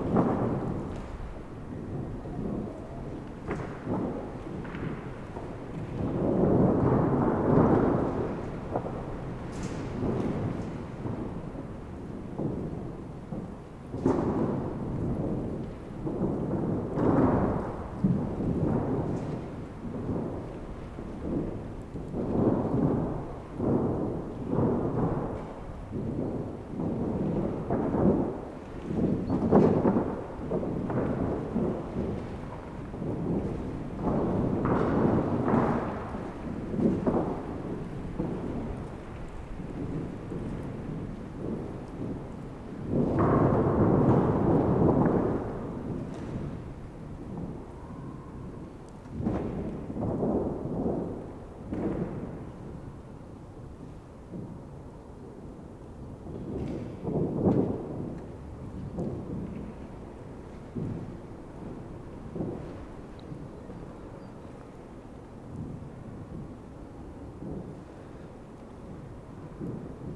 wind in the other dome
wind through a decaying geodesic dome, teufelsberg, berlin